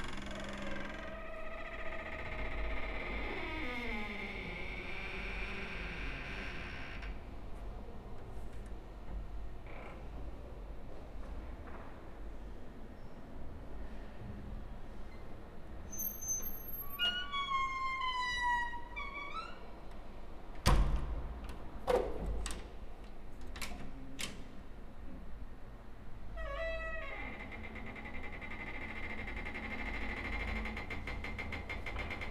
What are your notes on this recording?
while waiting for company, i performed a short solo :) with the door of a coatroom, interesting acoustics of the stairwell